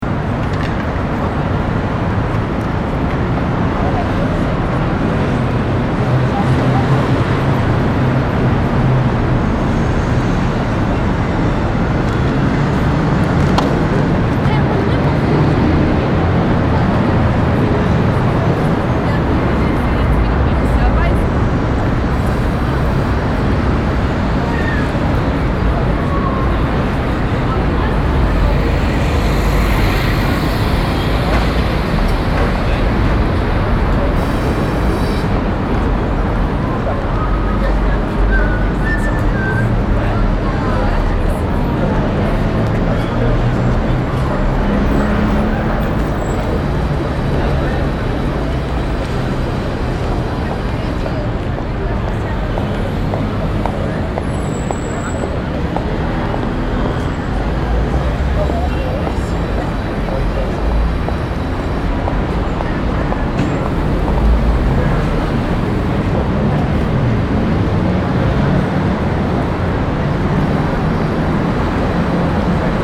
Stadtkern, Essen, Deutschland - essen, main station, traffic underpass
In einer Verkehrsunterführung unter den Eisenbahnschienen. Die Klänge von Verkehr und Menschen die vorbeiziehen.
Inside a traffic underpass under the railway tracks. The sounds of traffic and people passing by.
Projekt - Stadtklang//: Hörorte - topographic field recordings and social ambiences